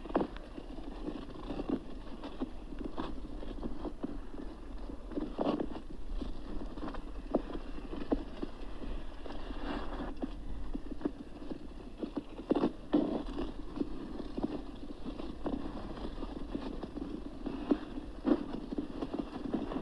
Utena, Lithuania, ice skaters
frozen lake, ice skaters. contact mics on ice
Utenos rajono savivaldybė, Utenos apskritis, Lietuva, 20 January, 11:15